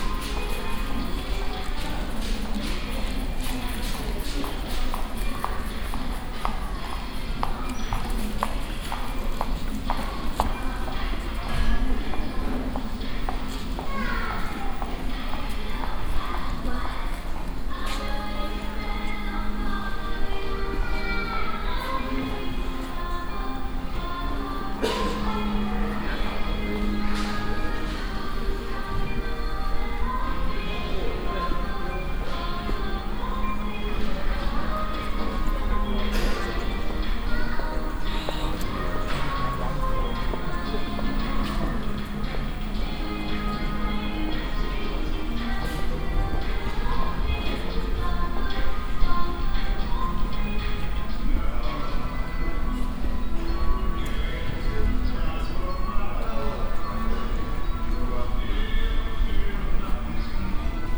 at the the cash area of a swedish furniture house - cash, shopping waggons and muzak
soundmap nrw - social ambiences and topographic field recordings